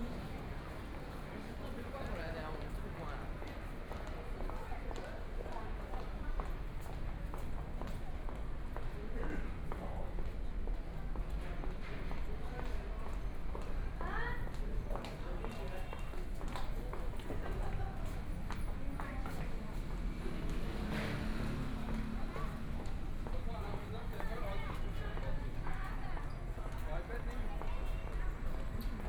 Yuyuan Garden, Shanghai - Tourist area
Shopping street sounds, The crowd, Bicycle brake sound, Walking through the old neighborhoods, Traffic Sound, Binaural recording, Zoom H6+ Soundman OKM II
Shanghai, China, November 2013